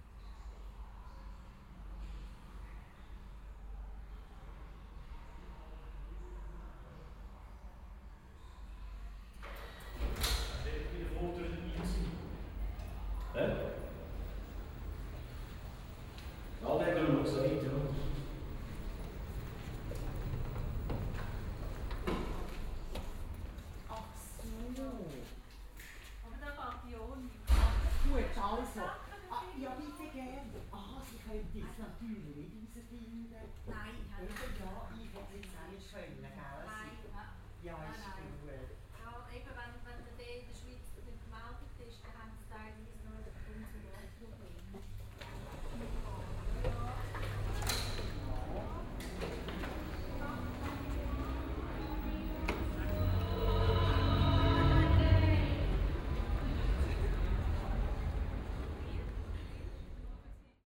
The automatic door of the townhall, a dialogue inside, silence.